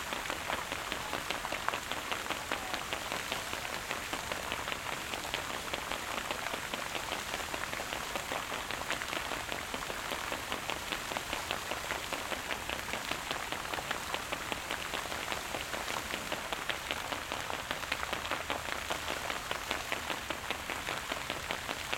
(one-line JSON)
{"title": "Budapest, Palatinus strand, Hungría - Water and light show in fountain", "date": "2019-09-10 19:53:00", "description": "Recorded with the XY microphone of a Zoom H&.", "latitude": "47.53", "longitude": "19.05", "altitude": "104", "timezone": "Europe/Budapest"}